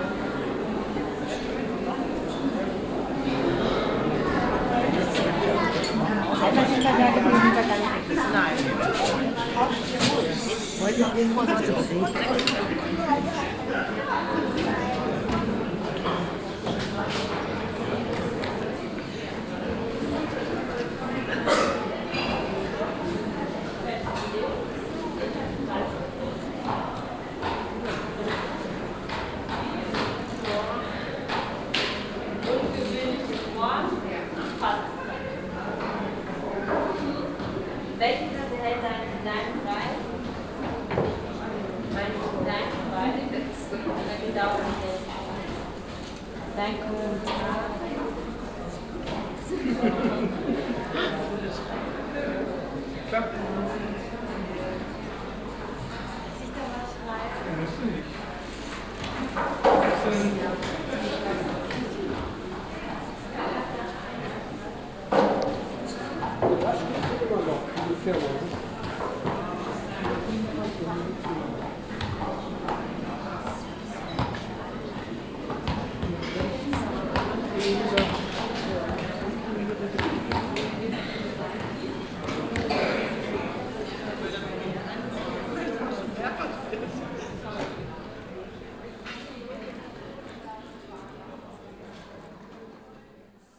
hannover, museum august kestner, museum night
atmosphere at the august kestner museum at the open public museum night 2010 - a walk thru the floors
soundmap d - social ambiences and topographic field recordings
Hanover, Germany, 18 June